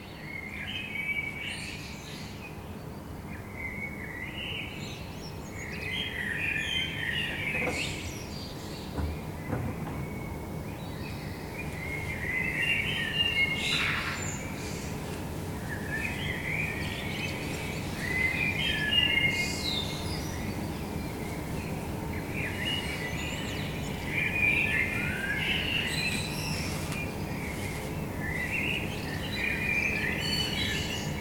bird song, city noise, metro .
Captation : ZOOMh4n